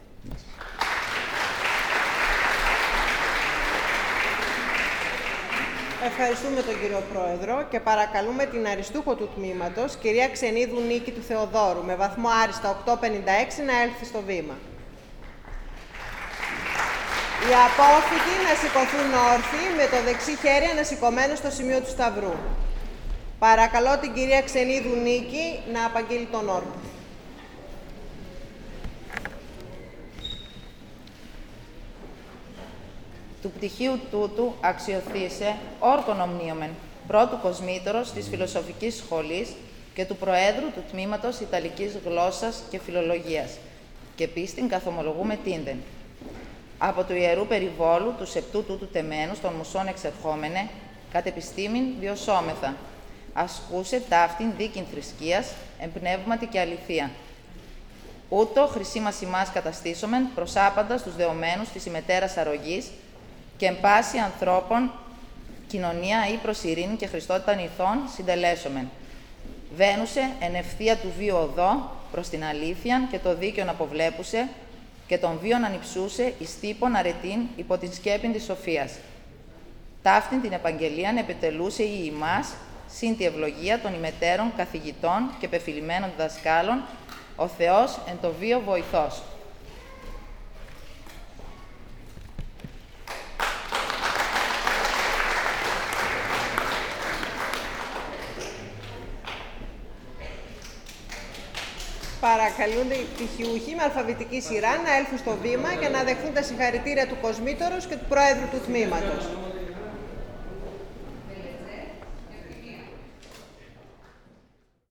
Philological Oath. Aristoteles University Thessaloniki - Aristoteles University Thessaloniki
Philological oath at the capping of the Italian language & philology faculty at the Aristoteles University Thessaloniki